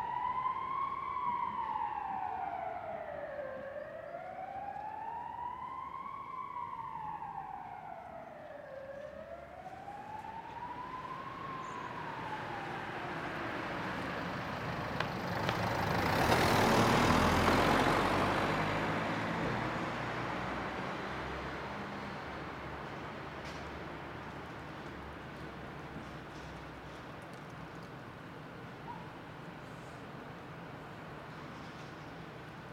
Av. des Sept Bonniers, Forest, Belgique - Ambulance and street ambience
Recording from 2009 (PCM-D50), siren sound was saturated, I recently decliiped it with Izotope RX9 declip module and it's quite ok.
4 January 2009, 12:30